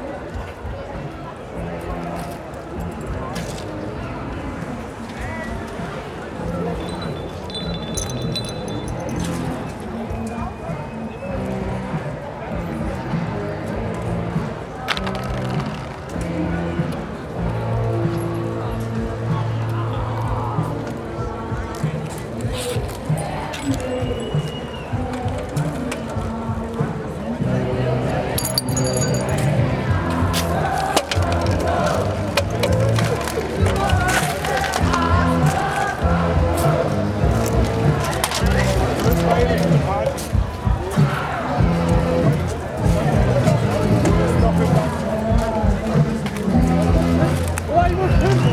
1st may soundwalk with udo noll
the city, the country & me: may 1, 2011